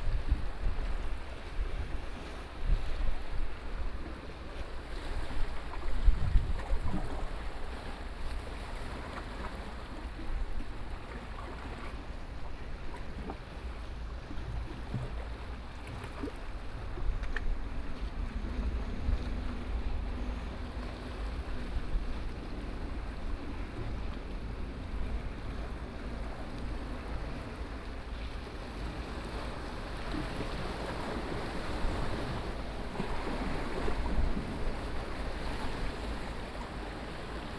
頭城鎮外澳里, Yilan County - the waves
Sound of the waves, Traffic Sound, Standing inside the Rocks, Hot weather
Toucheng Township, Yilan County, Taiwan, 29 July 2014